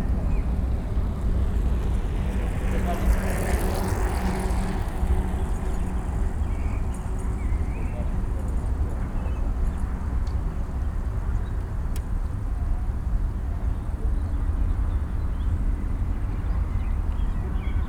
Dieselstr, Neukölln, Berlin - allotment, evening ambience
summer evening ambience at abandoned allotments, some activity in the street, voices, drone of an airplane. the area along the planned route of the A100 motorway is closed and fenced since a while, but it seems that families recently moved in here, and live under difficult conditions, no electricity, water etc.
(Sony PCM D50, DPA4060)